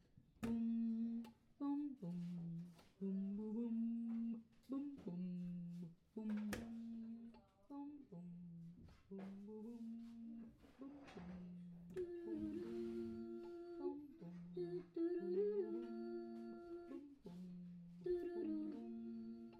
maddy singing near san pablo and alcatraz, oakland, ca - near san pablo and alcatraz, oakland, ca
field music raw maddy el rancho antioquia oakland california looper voice lovely lady lalala